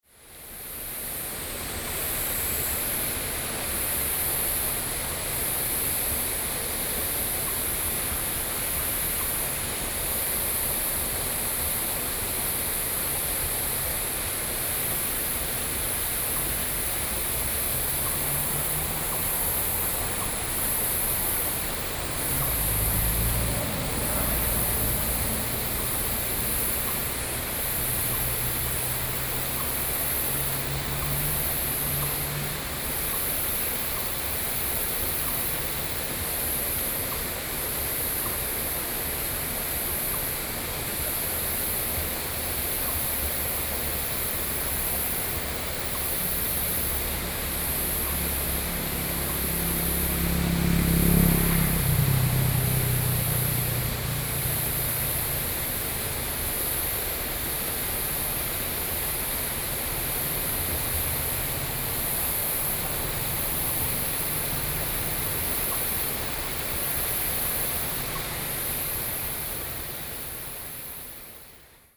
Stream of sound, Bird calls
Sony PCD D50
New Taipei City, Taiwan, 16 July 2012, ~08:00